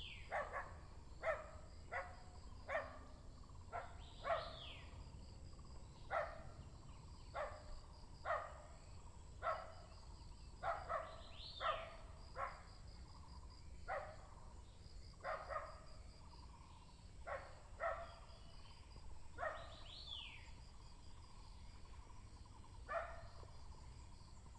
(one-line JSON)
{"title": "Shuishang Ln., Puli Township - Birds singing", "date": "2016-04-20 07:12:00", "description": "Bird sounds\nBinaural recordings\nSony PCM D100+ Soundman OKM II", "latitude": "23.93", "longitude": "120.90", "altitude": "758", "timezone": "Asia/Taipei"}